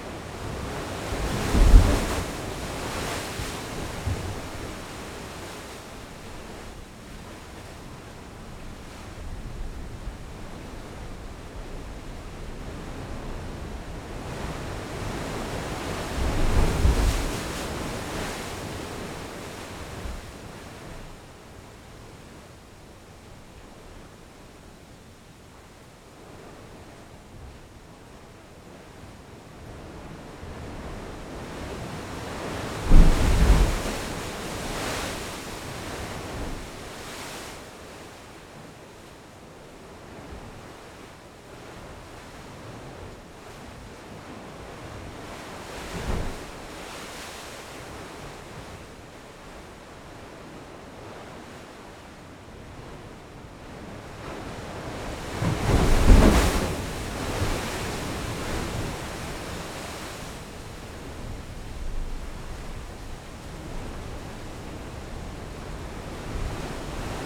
Las Puntas, Santa Cruz de Tenerife, España - Bufadero de Las Puntas
This recording was made in a place called Las Puntas, just in the entrance of the smallest hostel in the world. There we can find a “bufadero”. Is a hole in the volcanic ground throw which the wind, pushed by the waves of the ocean, blows.
Santa Cruz de Tenerife, Spain, 20 August 2012, 17:30